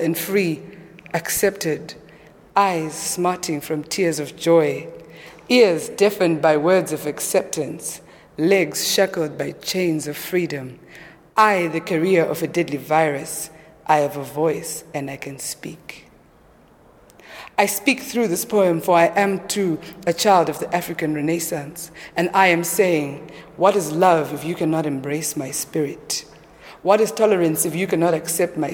African Collection, NGZ, Harare Gardens, Harare, Zimbabwe - Blackheat sings in the African Collection...
Nancy Mukondyo aka Blackheat DeShanti recites a poem in the African Collection of the National Gallery of Zimbabwe Harare. She walks and dances around the display while reciting…
Blackheat DeShanti is a Harare performance poet often also presenting her work with her band.